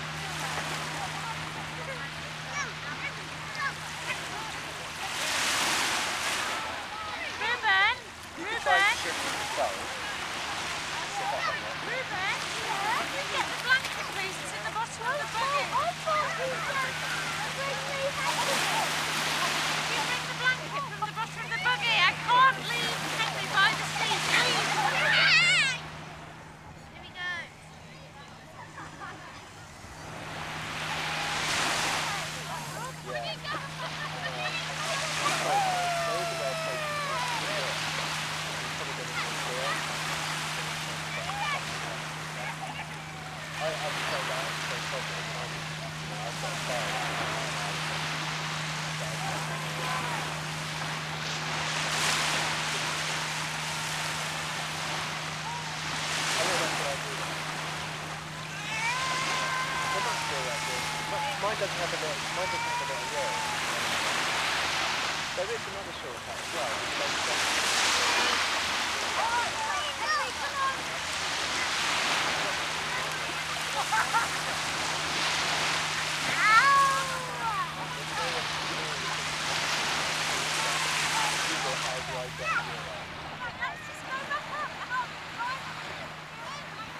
Sandbanks Beach, Dorset - Local lads & screaming Mum
Recorded on a Fostex FR-2LE Field Memory Recorder using a Audio Technica AT815ST and Rycote Softie.
UK, 30 March